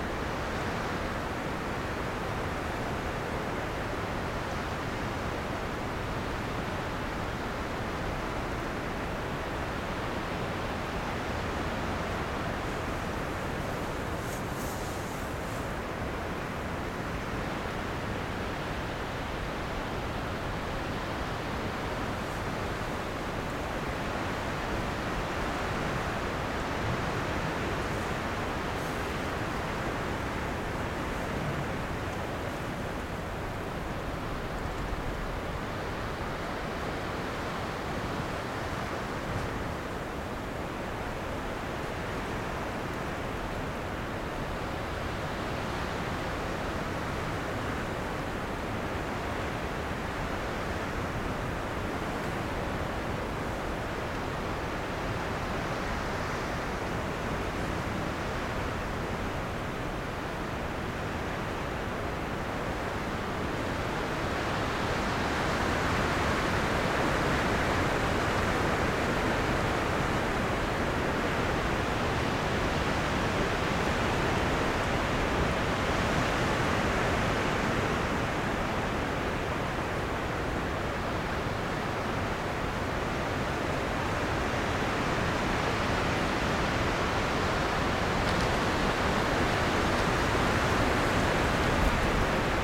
Parque Nacional Alberto de Agostini, Magallanes y la Antártica Chilena, Chile - storm log - rockwell kent trail basecamp
Rockwell Kent Trail basecamp, morning wind in forrest, wind SW 38 km/h, ZOOM F1, XYH-6 cap
Almost 100 years ago the artist and explorer Rockwell Kent crossed the Baldivia Chain between Seno Almirantazgo and the Beagle Channel via the Lapataia Valley. His documentation* of the landscape and climate is one of the first descriptions of this passage and serves as an important historic reference.
The intention of this research trip under the scientific direction of Alfredo Prieto was to highlight the significance of indigenous traces present in Tierra del Fuego, inter-ethnic traces which are bio-cultural routes of the past (stemming from the exchange of goods and genes). In particular, we explored potential indigenous cultural marks that Rockwell Kent described, traces that would connect the ancestors of the Yagán community with the Kawesqar and Selk’nam in the Almirantazgo Seno area.
*Rockwell Kent, Voyaging, Southward from the Strait of Magellan, G.P.